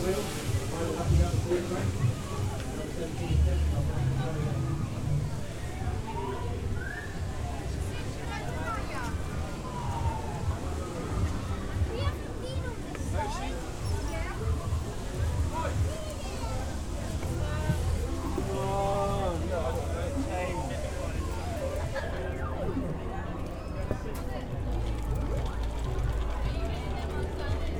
A walk along Brighton Pier April 26th 2008 3pm.